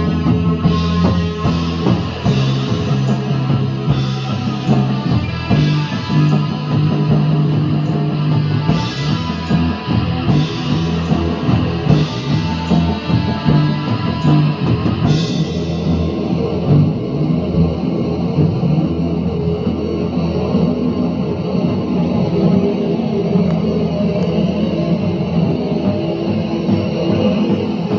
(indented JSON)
{
  "title": "Concert at Der Kanal, Weisestr. - Der Kanal, Season of Musical Harvest: STRANGE FORCES",
  "date": "2010-09-11 21:25:00",
  "description": "We are bringing the crops in, the Season of Musical Harvest is a happy season. This one was quite psychedelic: STRANGE FORCES is a Berlin based Band from Australia, we hear one song of their mood driving music.",
  "latitude": "52.48",
  "longitude": "13.42",
  "altitude": "60",
  "timezone": "Europe/Berlin"
}